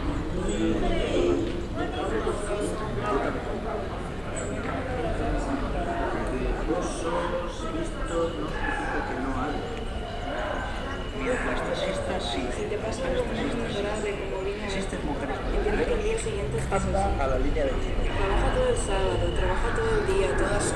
«Centro cívico» was a public sound installation piece produced as a result of a workshop by Brandon Labelle at La Casa Encendida, Madrid, 21-24/06/2014.
This recording presents a soundwalk through the finished installation.
Madrid, Spain